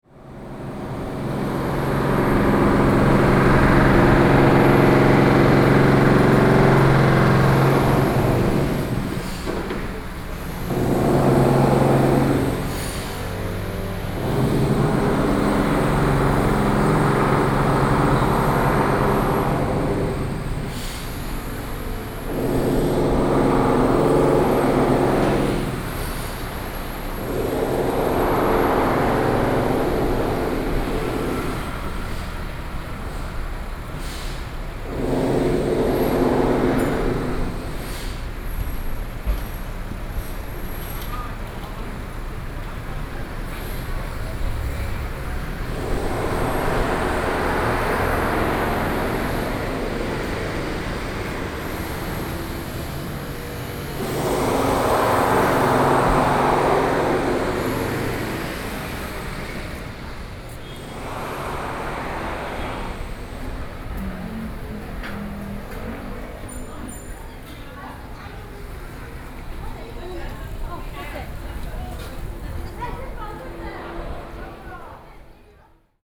Sec., Hankou St., Wanhua Dist., Taipei City - Construction
Construction, The inside of the building construction, Binaural recordings, Sony PCM D50 + Soundman OKM II, ( Sound and Taiwan - Taiwan SoundMap project / SoundMap20121115-1 )
Wanhua District, 漢口街二段83巷2號, 15 November 2012